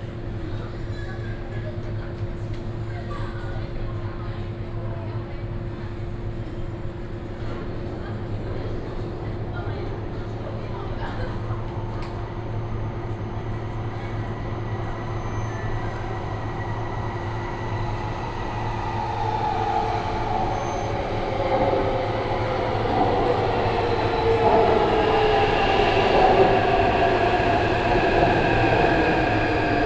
Qizhang Station, Xindian District, New Taipei City - Platform
in the Qizhang Station Platform, Zoom H4n+ Soundman OKM II